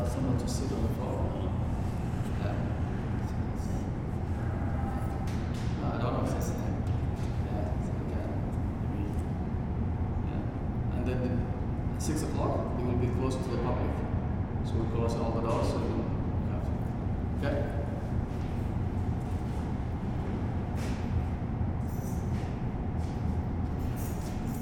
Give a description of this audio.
sound of the bridge on the +15 walkway Calgary